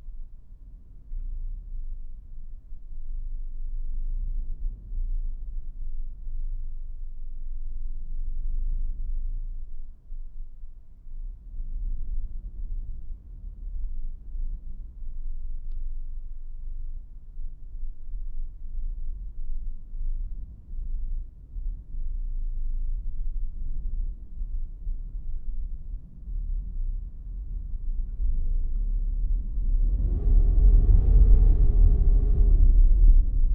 whispering wind recorded in the vent shaft in the kitchen